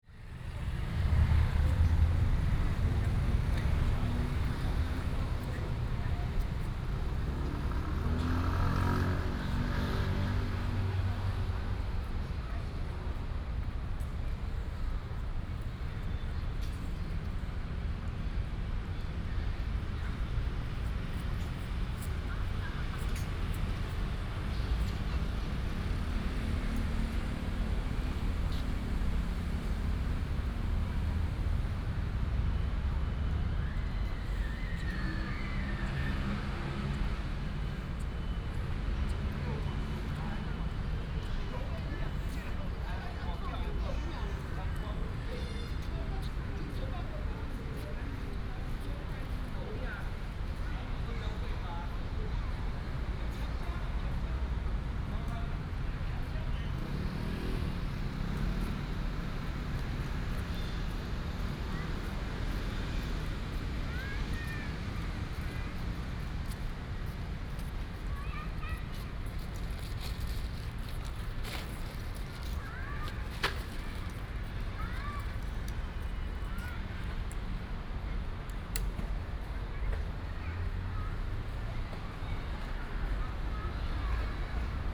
中央公園, Hsinchu City - Walking in the Park
Walking in the Park, traffic sound, birds sound, Childrens play area, Binaural recordings, Sony PCM D100+ Soundman OKM II